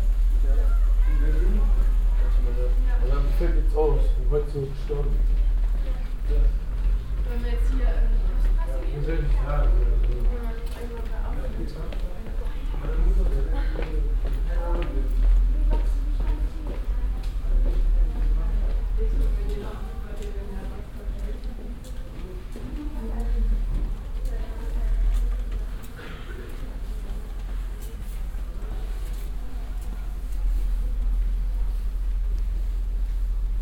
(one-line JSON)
{"title": "lippstadt, helle halle, in front of weapon store", "description": "grandpa and grandchild talking in front of a weapon store in a narrow cobble stone road\nsoundmap nrw - social ambiences and topographic field recordings", "latitude": "51.68", "longitude": "8.34", "altitude": "80", "timezone": "Europe/Berlin"}